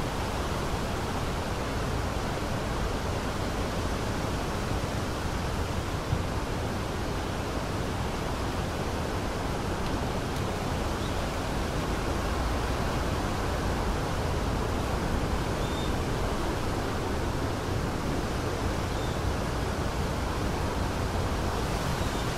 hotel iloha, saint leu, ile de la reunion
vent fort dans palmiers